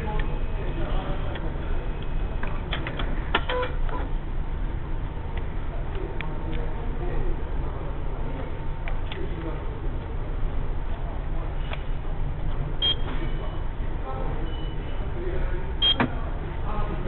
ticketmachine at harajuku at 4. p.m. 17.12.07
Jingumae, １丁目１８−２０